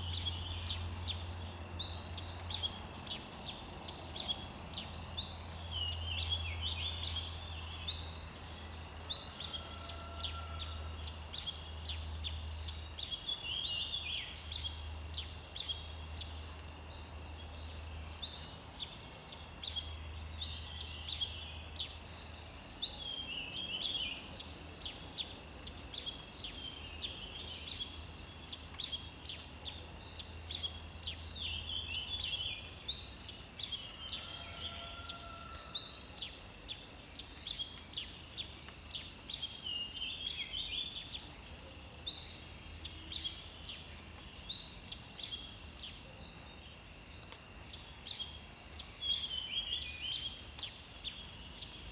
Shuishang Ln., 桃米里 - Early morning
Birds called
Zoom H2n MS+XY
Nantou County, Puli Township, 水上巷, 6 May 2016, 05:16